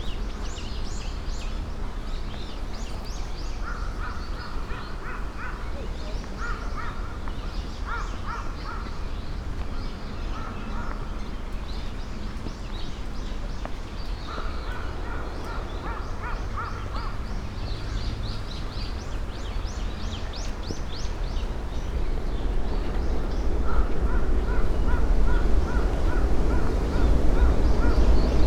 Katsura Imperial Villa gardens, Kyoto - gardens sonority